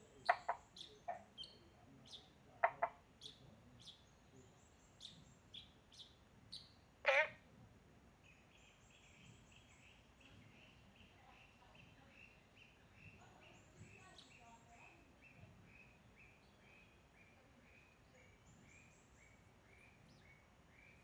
紅瓦厝山居民宿, Puli Township - Frogs chirping
Frogs chirping, Bird sounds, Ecological pool
Zoom H2n MS+ XY
Puli Township, Nantou County, Taiwan, 27 April 2016, 17:18